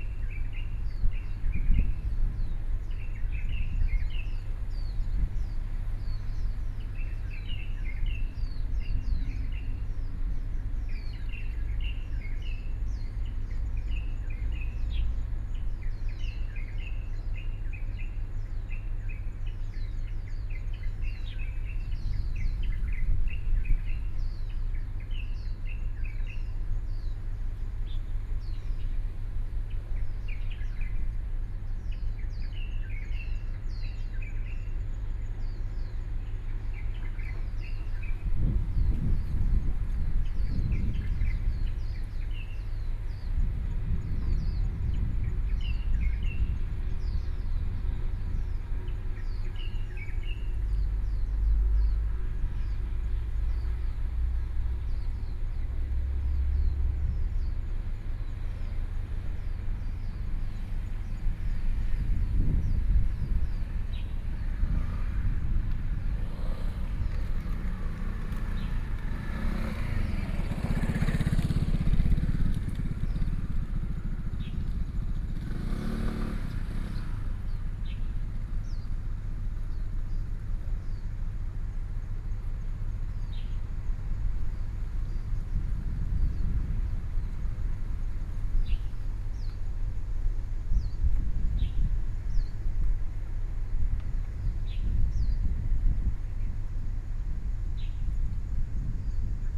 梗枋漁港, Yilan County - Fish Port
Fish Port, Traffic Sound, Birdsong sound, Hot weather